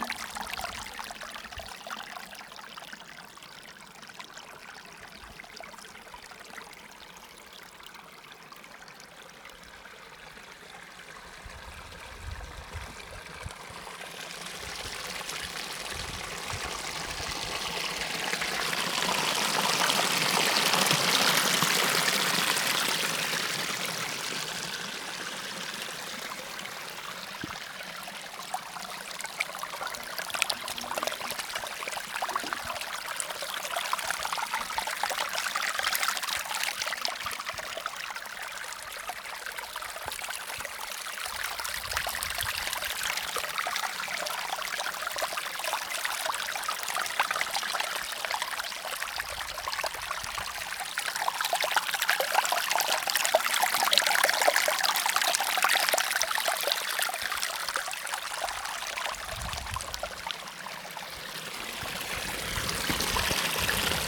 Lacs de Vens, France - Following stream downhill (WLD 2014)
Hi-pass filter used in Audacity to reduce wind noise. Recorded with zoom h1 on World Listening Day 2014)